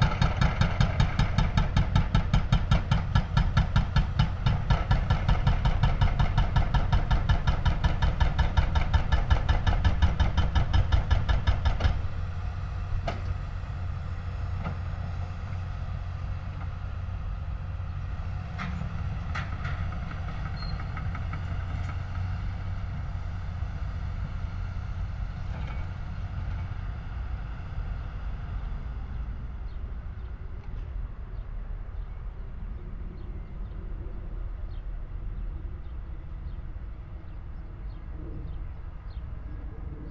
berlin, sonnenallee: aufgegebenes fimengelände - A100 - bauabschnitt 16 / federal motorway 100 - construction section 16: demolition of a logistics company
demolition of a logistics company, excavator with mounted jackhammer demolishes building elements
april 29, 2014